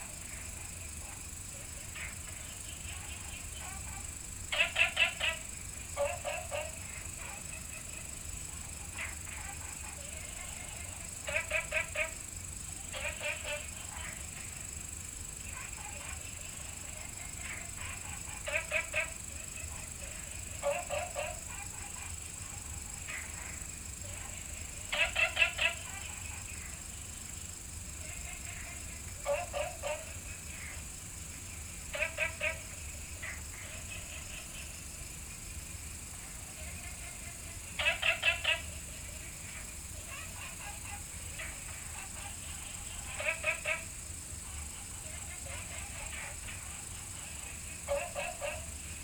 青蛙ㄚ 婆的家, Puli Township - Frog calls
Frog calls
Binaural recordings
Sony PCM D100+ Soundman OKM II